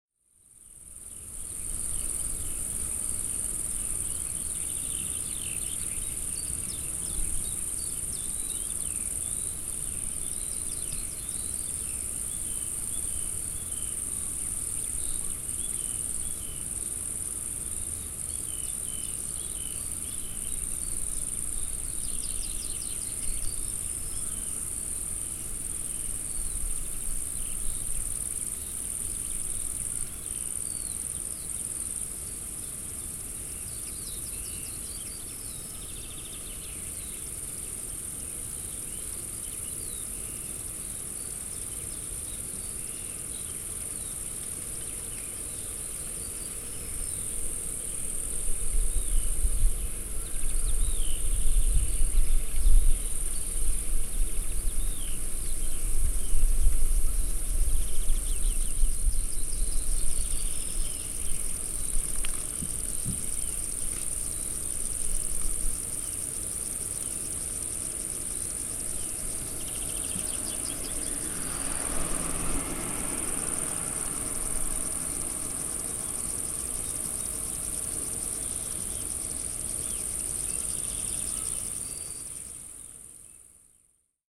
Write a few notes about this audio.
former Soviet airfield, MiG fighter jets, bunkers, shelters, Background Listening Post, DDR, insects, birds